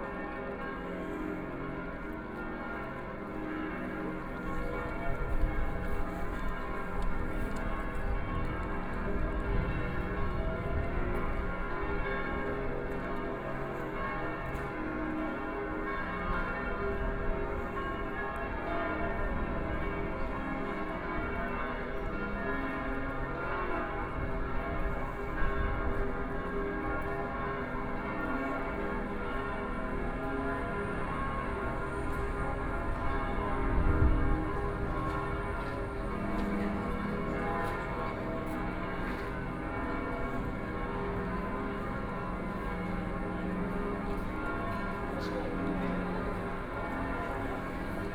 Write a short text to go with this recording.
Church bells, Walking in the streets